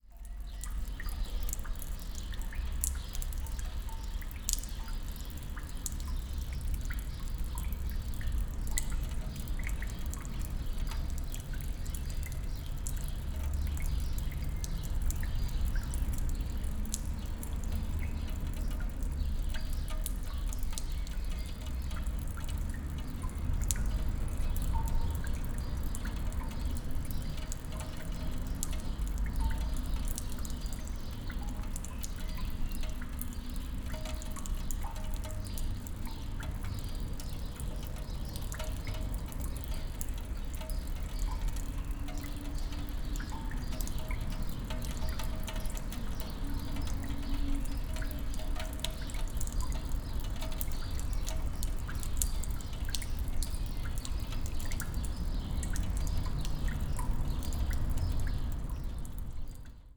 Maribor, Nova vas, car park - dripping water
car park in a bad shape, water seeps through the ceiling
(SD702 DPA4060)
Maribor, Slovenia